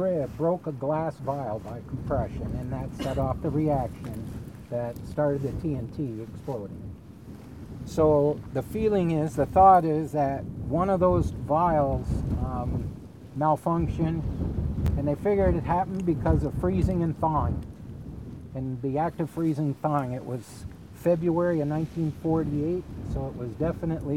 Savanna Army Depot site, IL, USA - Story about the 50 foot crater at Savanna Depot
FWS Agent Alan Anderson explains the explosion which created this 50 foot crater in this landscape of munitions bunkers at the former Savanna Army Depot, which is slowly being decontaminated and turned into a wildlife refuge. University of Iowa graduate art students ans Sarah Kanouse in attendance with yours truly.